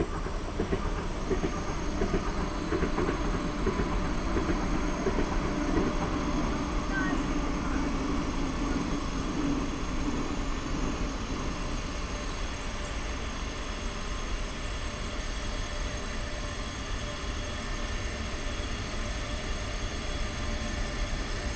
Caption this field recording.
sitting on a small hill in the back of the Mediapark buildings, listening to trains and their echos reflecting from the walls. besieds that, warm summer evening ambience. (Sony PCM D50, DPA4060)